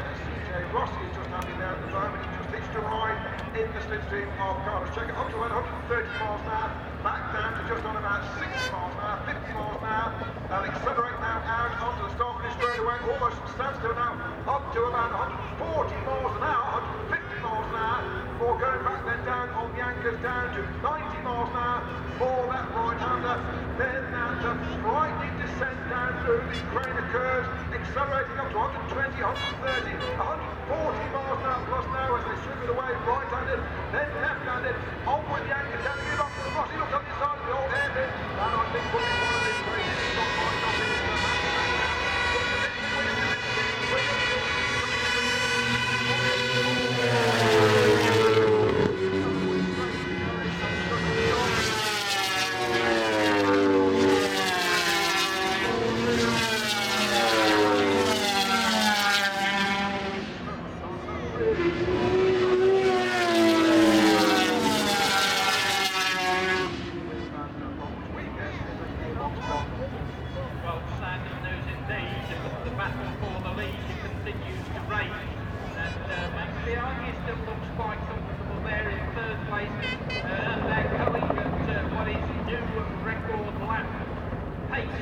Castle Donington, UK - British Motorcycle Grand Prix 2002 ... 500cc ...
500 cc motorcycle race ... part one ... Starkeys ... Donington Park ... the race and all associated noise ... Sony ECM 959 one point stereo mic to Sony Minidick
Derby, UK, July 2002